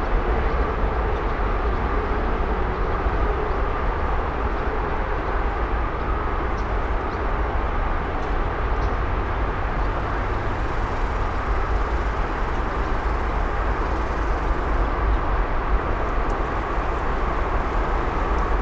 Neils Thompson Dr, Austin, TX, USA - Marsh by Mopac and 183
Olympus LS-P4 and LOM Usis, mounted in a tree. You can hear cicadas, grackles, water frogs, leaves in the wind, and marshlands, drowned out by the din of the nearby freeway intersection.